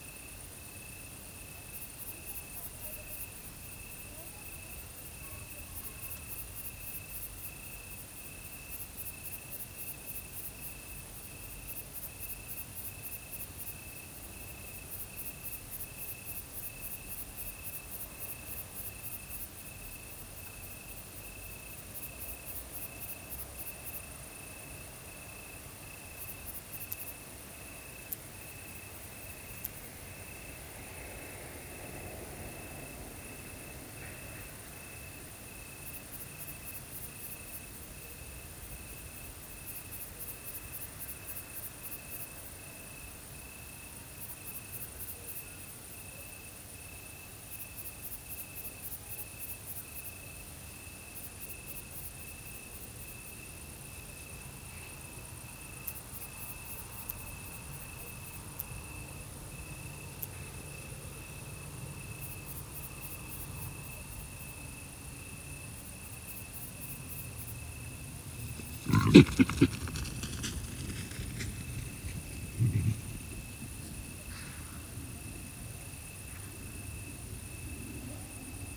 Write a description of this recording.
Ambiente nocturno en el campo de Vilanova.